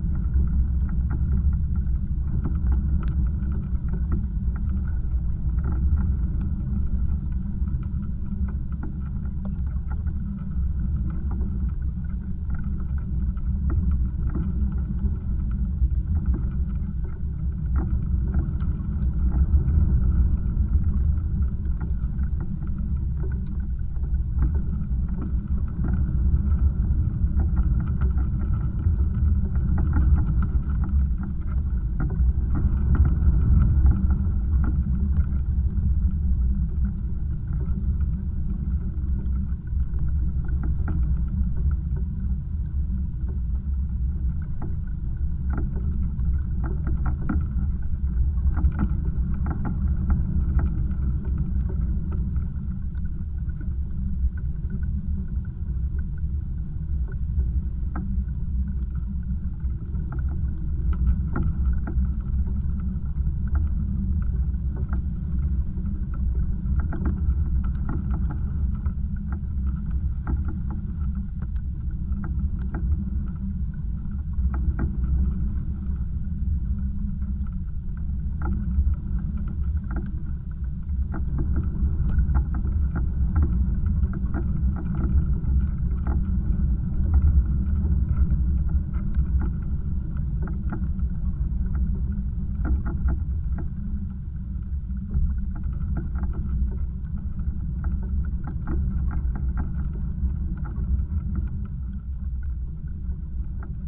EO Chanion Rethimnou, Crete, sea debris
contact microphone on a pile of sea debris